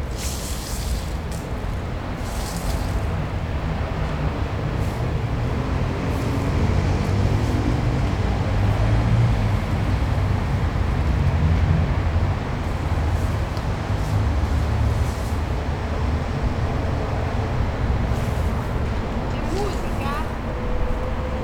Milano, Italia - WLD. macao, the new center of art and culture
20 July 2012, ~19:00